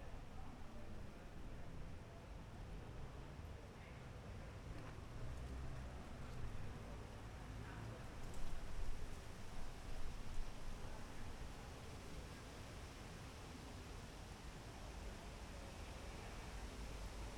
{"title": "berlin, paul-linke-ufer", "date": "2010-07-18 01:40:00", "description": "night ambience at landwehrkanal, berlin, wind.", "latitude": "52.49", "longitude": "13.43", "altitude": "39", "timezone": "Europe/Berlin"}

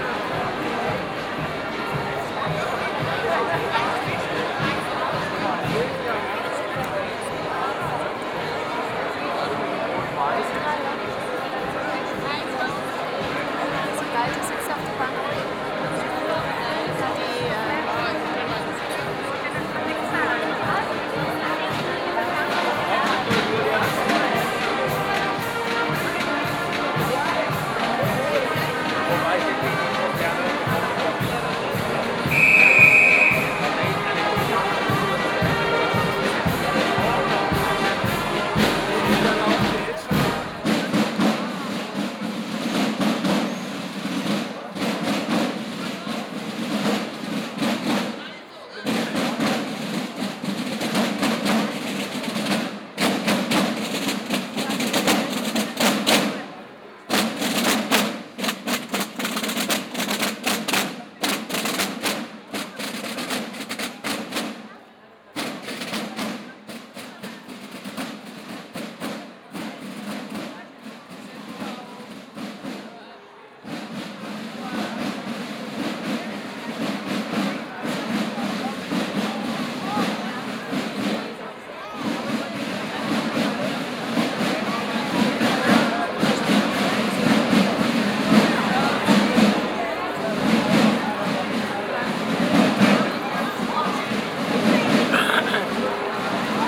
{"title": "Aarau, Rathausgasse, Maienzug, Schweiz - Maienzug first part", "date": "2016-07-01 08:30:00", "description": "This is the first part of the Maienzug, people are clapping to the march, shouting at each other or just say hello, the brass bands are playing, the military history of the Maienzug is quite audible, there are also Burschenschaften singing their strange songs while stamping with their feet.", "latitude": "47.39", "longitude": "8.04", "altitude": "389", "timezone": "Europe/Zurich"}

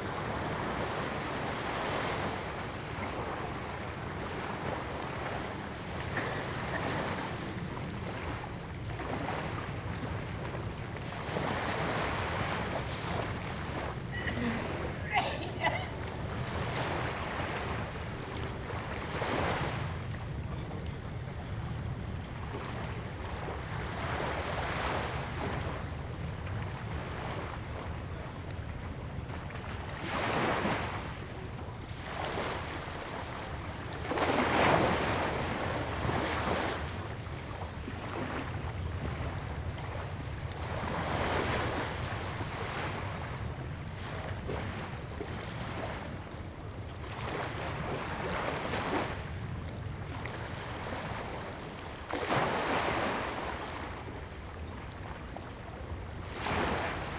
{"title": "六塊厝漁港, Tamsui Dist., New Taipei City - Small fishing pier", "date": "2016-04-16 07:30:00", "description": "the waves, Small fishing pier\nZoom H2n MS+XY", "latitude": "25.24", "longitude": "121.45", "altitude": "3", "timezone": "Asia/Taipei"}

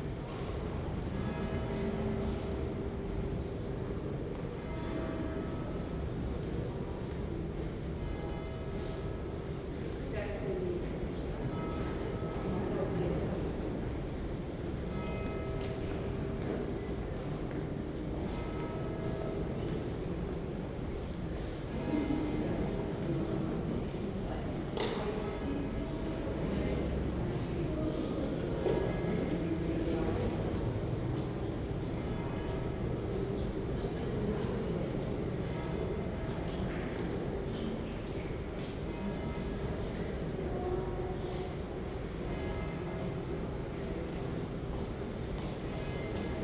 {"title": "Westminster Abbey Cloisters - 2017-06-22 Westminster Abbey Cloisters", "date": "2017-06-22 12:18:00", "description": "Sitting in the Cloisters with people walkig by. The bell in the background is calling worshipers to Holy Communion. Recorded on a Zoom H2n.", "latitude": "51.50", "longitude": "-0.13", "altitude": "15", "timezone": "Europe/London"}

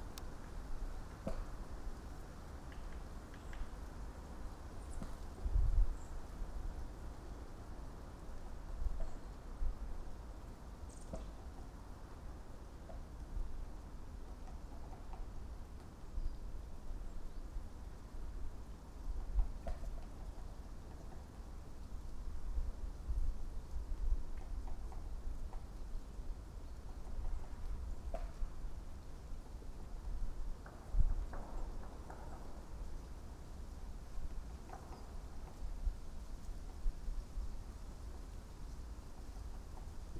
{"title": "two trees, piramida - creaking trees", "date": "2012-12-25 15:18:00", "description": "birds singing and pecking, gentle wind, traffic noise beyond the hill ... and few tree creaks", "latitude": "46.57", "longitude": "15.65", "altitude": "394", "timezone": "Europe/Ljubljana"}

